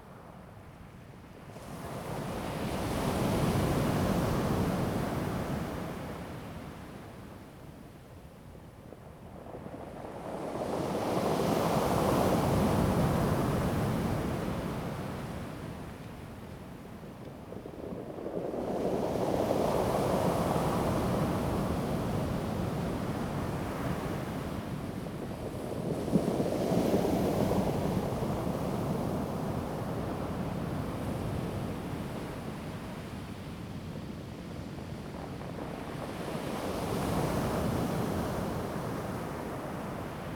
Waves, Rolling stones
Zoom H2n MS+XY
Daren Township, Taitung County, Taiwan, 23 April 2018, 14:43